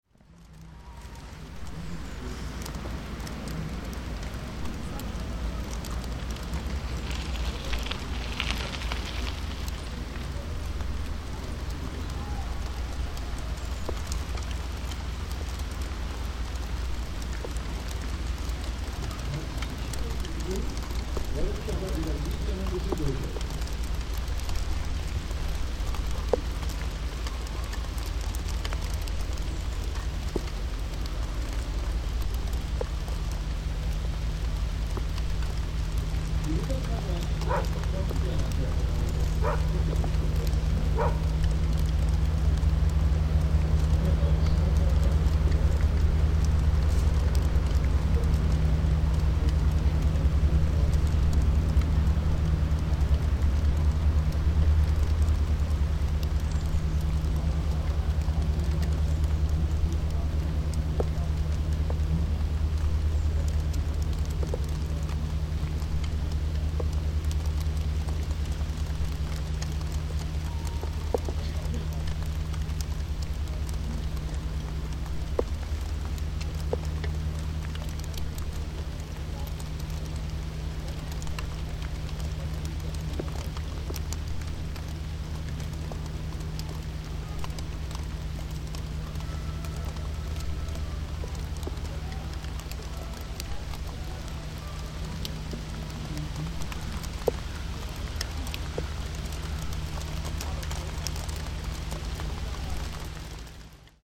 berlin, lohmühlenufer: abfallbehälter - the city, the country & me: wastebasket
rain drops falling down on the waste beside a wastebasket
the city, the country & me: june 14, 2008